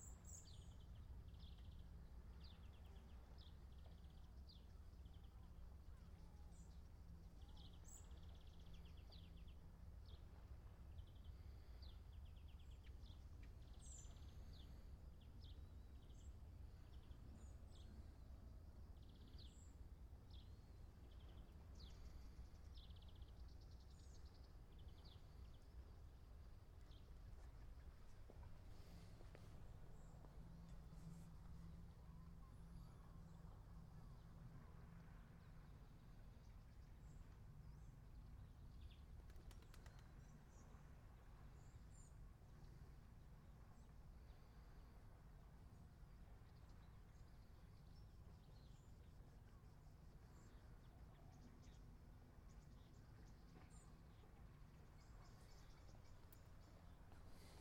Paxi, Greece - Dawn above Lakkos Beach, Paxos, Greece
Recording from villa above Lakkos Beach on Paxos Island, Greece. Made on 22nd June 2016 possibly around 6am in the morning. Bird sounds include Green Finch and other unidentified birds.